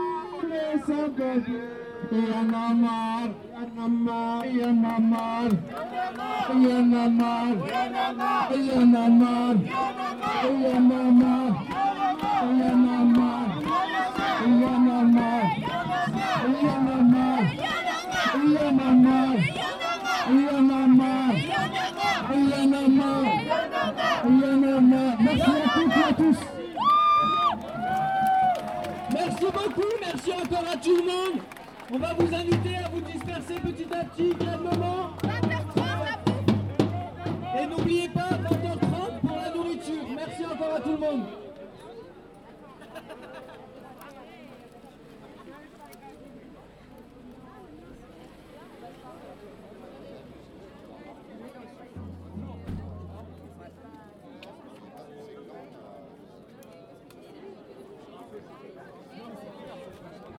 La Chapelle, Paris, France - hymne des sans papiers et des réfugiés
demonstration & talks following the intervention of the police against the migrants rue Pajol.
9 June 2015